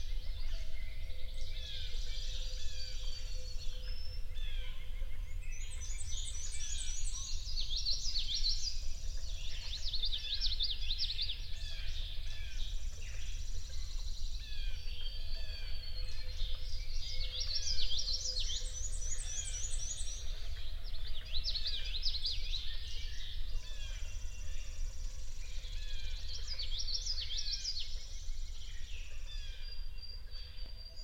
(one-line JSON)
{"title": "Washington, NJ, USA - penn swamp dawn", "date": "2007-04-15 05:00:00", "description": "I've spent many an hour beside this swamp recording dawn choruses; this is located deep in the heart of the pine barrens. A barred owl hoots and calls (\"who cooks for you>?) in this five minute excerpt of an hour-long recording.", "latitude": "39.69", "longitude": "-74.63", "altitude": "16", "timezone": "GMT+1"}